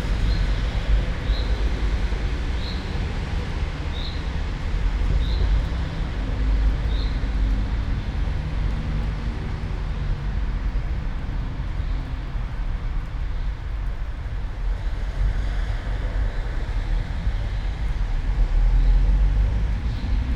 all the mornings of the ... - may 31 2013 fri